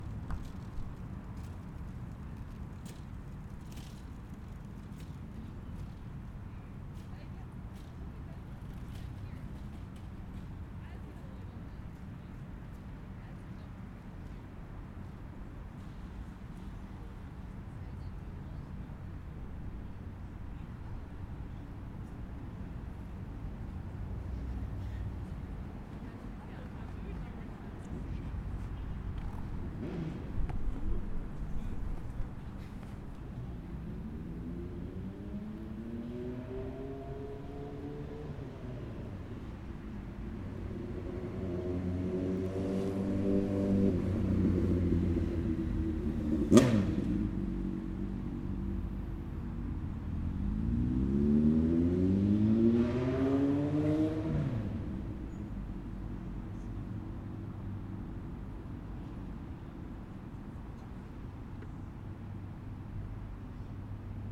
Sitting in the grass at Acacia Park, cars and normal human interaction can be heard. Recorded with ZOOM H4N Pro with a dead cat.